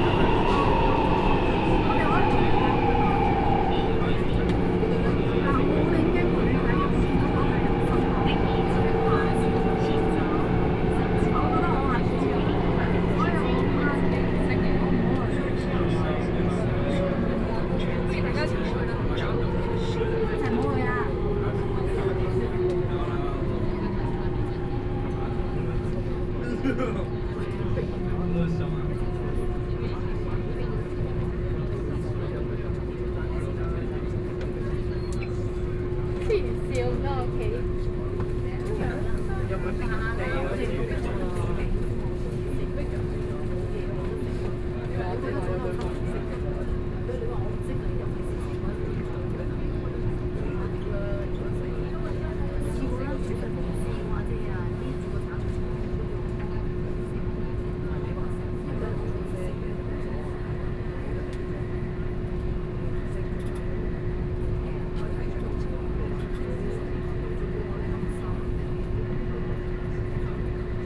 Zhongxiao Road, Taipei - Blue Line (Taipei Metro)
Hong Kong tourists dialogue sound, from Sun Yat-Sen Memorial Hall station to Taipei Main Station, Sony PCM D50 + Soundman OKM II